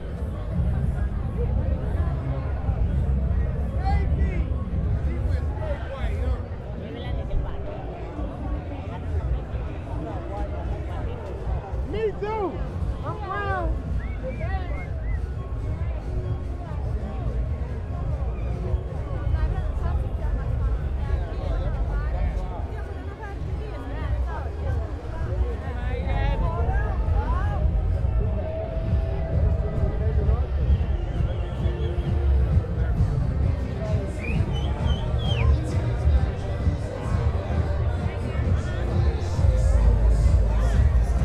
New Year's Eve on South Beach, Miami walking through the crowds. The music from one club mixes over with the beats from the next and the people speak in all different tounges.
This is the first of my year long, two minute, daily sound diary broadcasts - all unaltered and recorded on a Zoom H4.